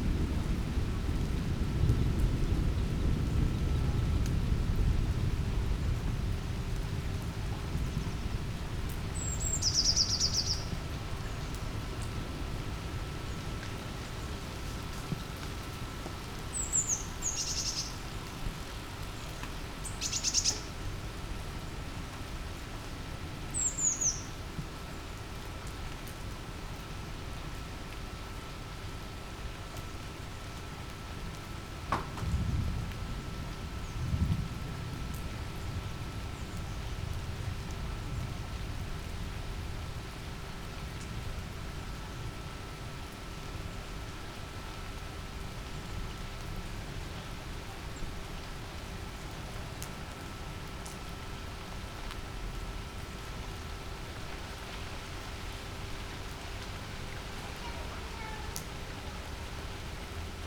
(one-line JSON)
{"title": "Poznan, Sobieskiego housing estate - on the corner", "date": "2015-06-07 10:18:00", "description": "quiet ambience among the apartment buildings after a strong storm. rain drops sliding from leaves, whirring ac units of a nearby discount store, some bird calls, some echoed conversations.", "latitude": "52.46", "longitude": "16.91", "altitude": "102", "timezone": "Europe/Warsaw"}